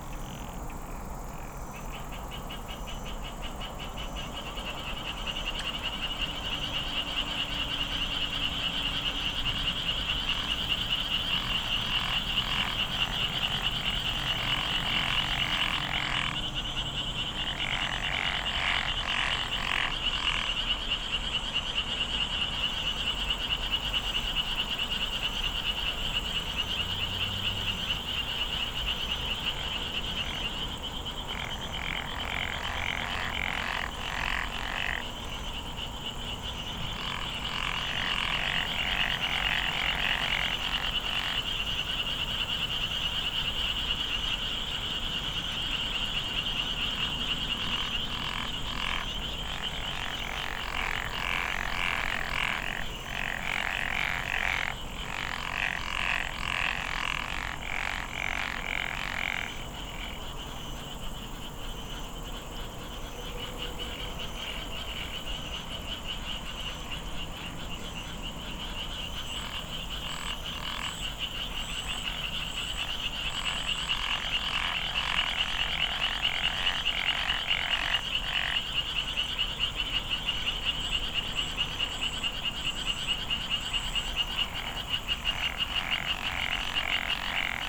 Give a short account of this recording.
...after a long dry period there are some summer rain events in Gangwon-do...the days of rain stir amphibian activity in the small remnant wetland...still, the water level has dropped due to the nearby 위엄 dam responding to summer electricity demand in nearby Seoul...